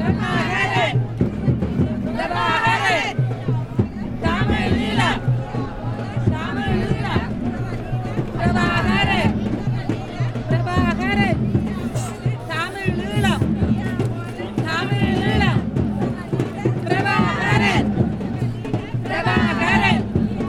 Sri Lanka's musician playing and manifesting in Alexanderplatz jrm